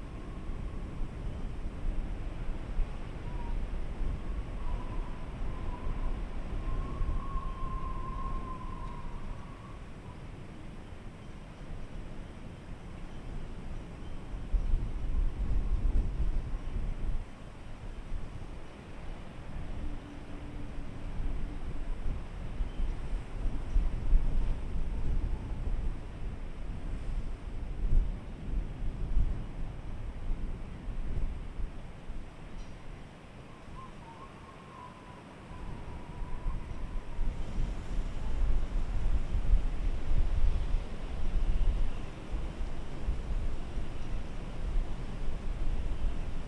R. Direita, Angra do Heroísmo, Portugal - Vento na rua
O vento na rua